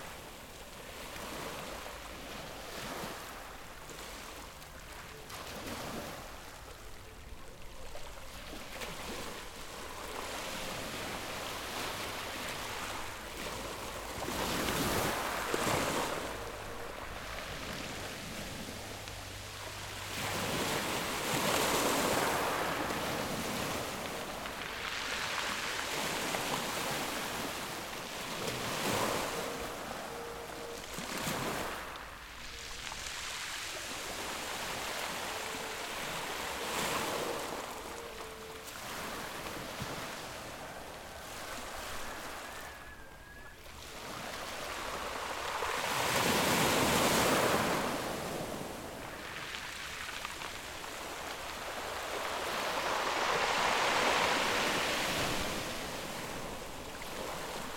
Dois de Julho, Salvador - BA, Brasil - Roza/Azul
Som do mar batendo nas pedras do solar do unhão. Em Salvador, ao lado do MAM da Bahia.
Utilizando Zoom
Feito por Raí Gandra para a disciplina de Sonorização I UFRB Marina Mapurunga